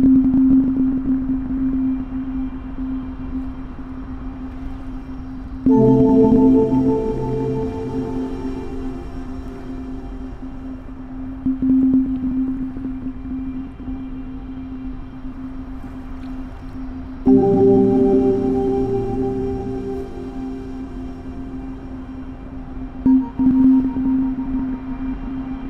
cologne, rheinseilbahn, klanginstallation 3klangreise
temporäre klanginstallation dreiklangreise
ort: koeln, rheinseilbahn
anlass: 50jähriges jubiläum der seilbahn
projekt im rahmen und auftrag der musiktriennale - koeln - fs - sound in public spaces
frühjahr 2007